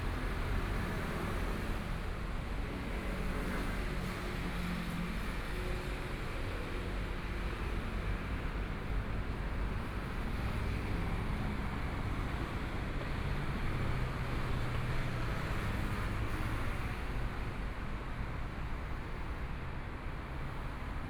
Changchun Rd., Zhongshan Dist. - on the Road
Walking on the road, （Changchun Rd.）Traffic Sound, Binaural recordings, Zoom H4n+ Soundman OKM II
20 January 2014, ~16:00